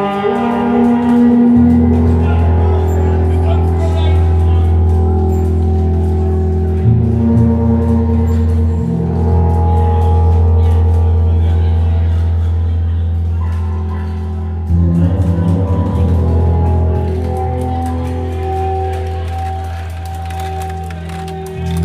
vir2Ual3 - Vir2Ual testosterone filled bodies playing with bass
OH, USA